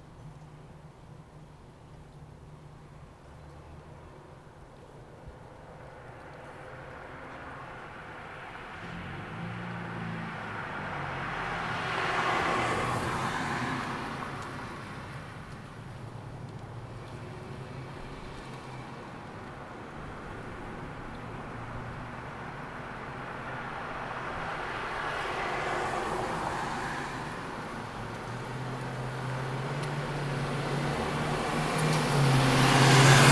Allentown, PA, USA - North Eastern Side of Campus
A partly cloudy day. The temperature was just above freezing. A good amount of traffic covered up the bell of 3pm from Muhlenberg College's Haas building.